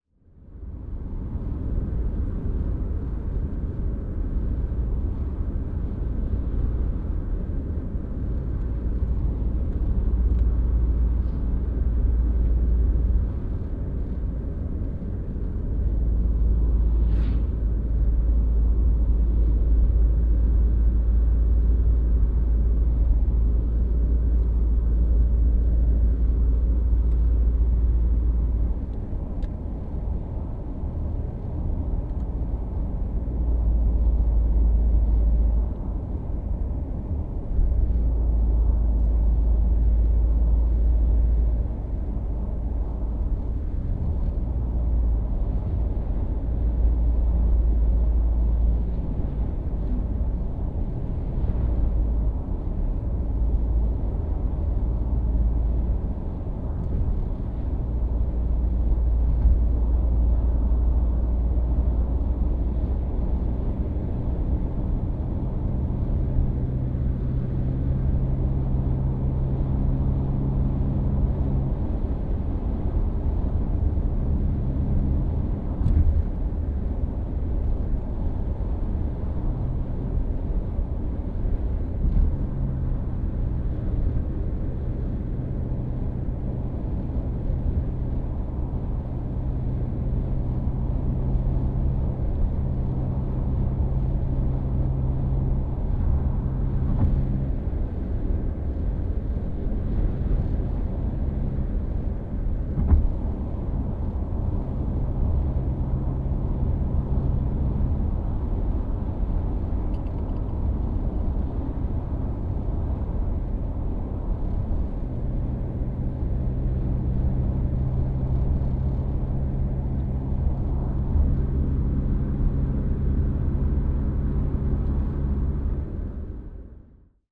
Campello, Alicante, Spain - (24 BI) Inside of a car on a highway
Recording of a car atmosphere with windows closed on a highway.
Recorded with Soundman OKM on Zoom H2n.
Comunitat Valenciana, España, 8 November 2016